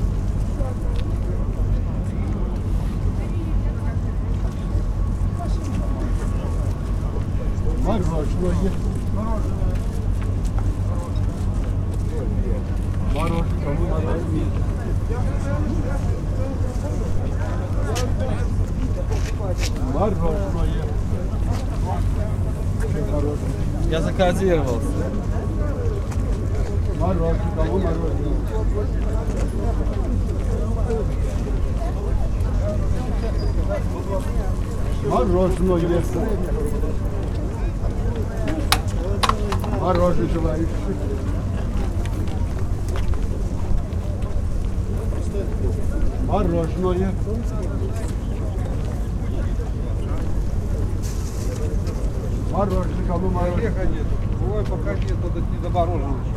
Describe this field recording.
An icecream vendor roaming around the 'Fields of Wonder' (Поля чудес) at Zhdanovichi market